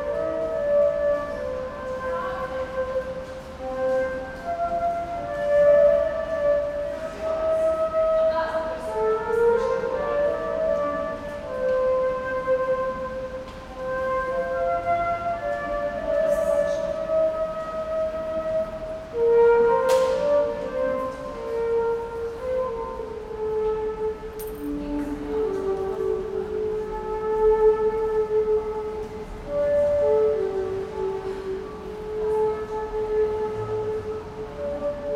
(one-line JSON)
{"title": "Rijeka, ChakraMan, PassageUnderStreet", "description": "Street Player between trips", "latitude": "45.33", "longitude": "14.44", "altitude": "9", "timezone": "Europe/Berlin"}